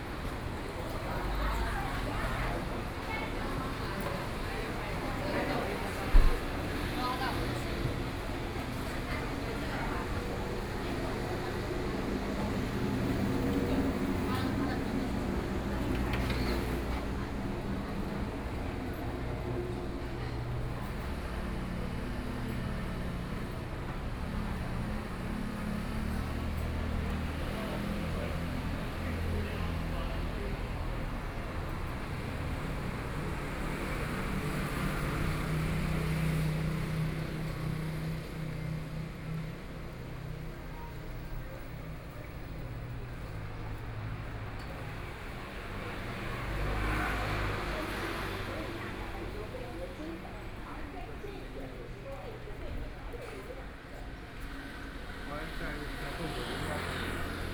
Binhai 1st Rd., Gushan Dist. - walking on the Road
walking on the Road, Traffic Sound, Various shops voices
Sony PCM D50+ Soundman OKM II
Kaohsiung City, Taiwan, 21 May 2014, 18:37